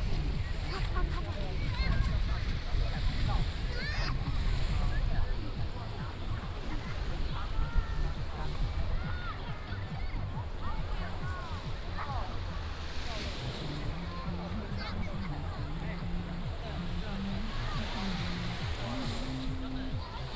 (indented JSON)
{
  "title": "外木山海灘, Anle Dist., Keelung City - At the beach",
  "date": "2016-08-02 15:15:00",
  "description": "At the beach",
  "latitude": "25.17",
  "longitude": "121.71",
  "altitude": "5",
  "timezone": "Asia/Taipei"
}